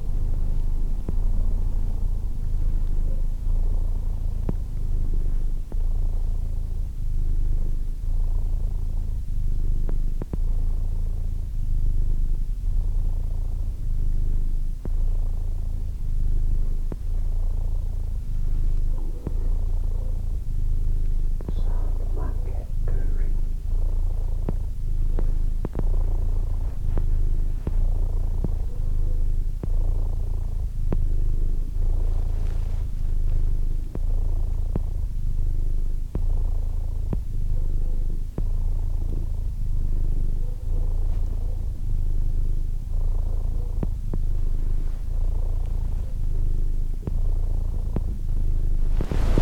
Lying in Bed. My cat next to me. Primo EM172's to Sony ICD-UX512F.